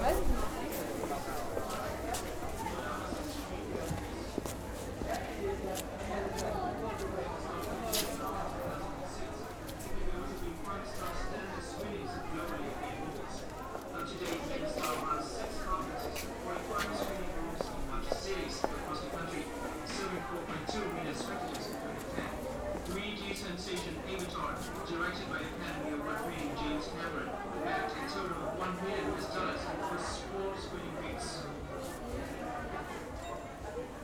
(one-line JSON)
{"title": "berlin, herzbergstraße: dong xuan center, halle - the city, the country & me: dong xuan center, hall 1", "date": "2011-03-06 15:47:00", "description": "soundwalk through hall 1 of the dong xuan center, a vietnamese indoor market with hundreds of shops where you will find everything and anything (food, clothes, shoes, electrical appliance, toys, videos, hairdressers, betting offices, nail and beauty studios, restaurants etc.)\nthe city, the country & me: march 6, 2011", "latitude": "52.53", "longitude": "13.49", "altitude": "50", "timezone": "Europe/Berlin"}